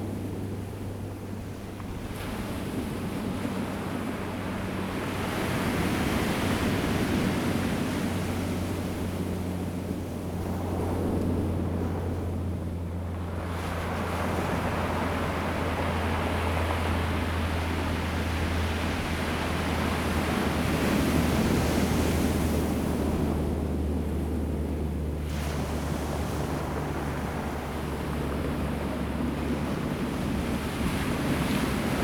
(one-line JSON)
{"title": "達仁鄉南田村, Taitung County - Sound of the waves", "date": "2014-09-05 14:43:00", "description": "Sound of the waves, The weather is very hot\nZoom H2n MS +XY", "latitude": "22.26", "longitude": "120.89", "altitude": "5", "timezone": "Asia/Taipei"}